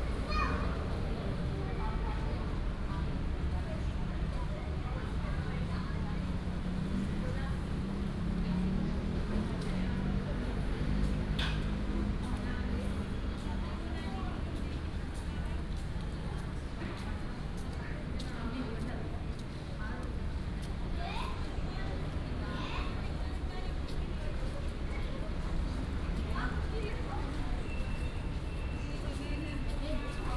Siwei Park, Banqiao Dist., New Taipei City - The elderly and children
in the Park, The elderly and children, Traffic Sound